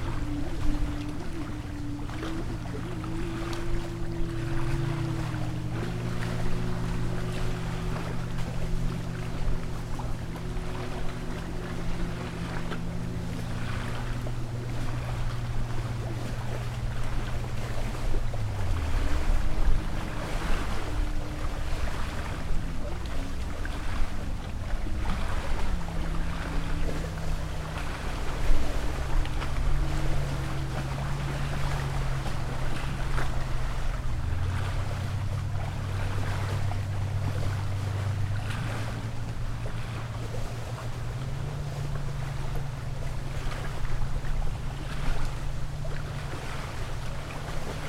Three Pines Rd., Bear Lake, MI, USA - Open Water Dynamics (WLD2015)
Waves from wind, motor boats and jetskis hit the north shore of Bear Lake. As heard from the top of steps leading down to water's edge. Stereo mic (Audio-Technica, AT-822), recorded via Sony MD (MZ-NF810).